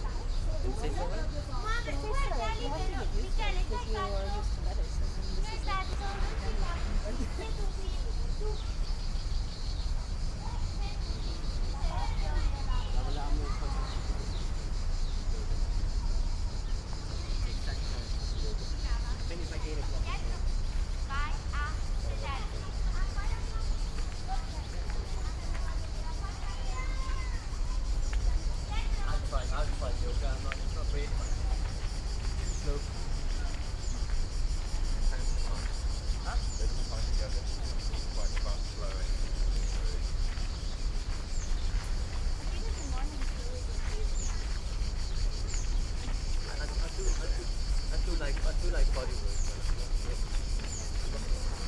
{"title": "Quartiere VI Tiburtino, Roma, Włochy - In park @ Villa Mercede - binaural", "date": "2015-07-01 10:58:00", "description": "Walk in the park @ Villa Mercede Biblioteca", "latitude": "41.90", "longitude": "12.51", "altitude": "56", "timezone": "Europe/Rome"}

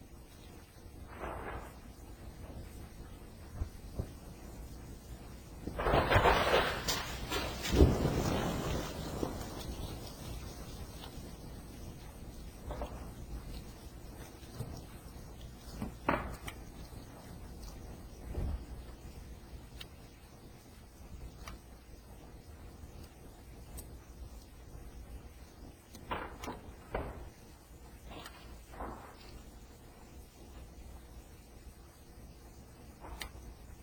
{"title": "428 north grant ave, fort collins, co 80521", "date": "2011-10-25 19:05:00", "description": "On the evening of October 25, 2011 Fort Collins Colorado experienced a strong fall snowstorm. The heavy wet snow resulted in an almost constanct sounds of snapping tree limbs, falling trees, and mini avalnches of snow falling from the trees.", "latitude": "40.59", "longitude": "-105.09", "altitude": "1521", "timezone": "America/Denver"}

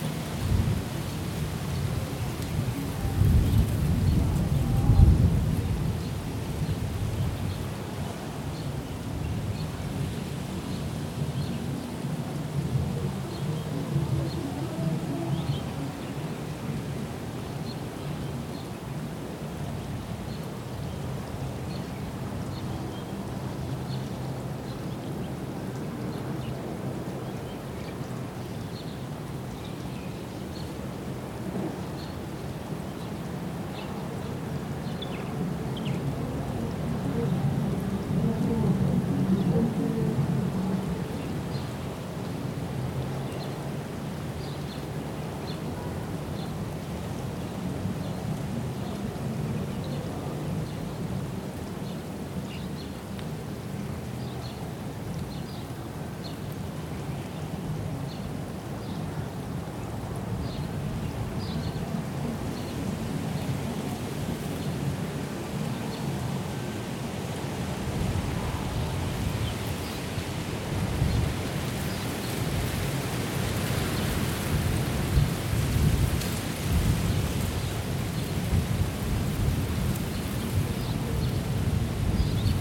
{"title": "Hatta - United Arab Emirates - Wedding celebrations carried on the wind", "date": "2016-07-08 13:28:00", "description": "Recording in a palm grove, faint strains of drumming for a nearby wedding can just be heard.\nRecorded using a Zoom H4N", "latitude": "24.81", "longitude": "56.13", "altitude": "307", "timezone": "Asia/Dubai"}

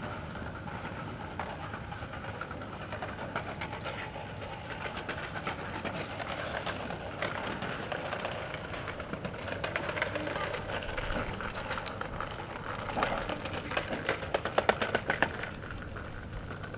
{"description": "Lyon, Place Schonberg, Children playing on a place in front of the Library.", "latitude": "45.80", "longitude": "4.83", "altitude": "231", "timezone": "Europe/Paris"}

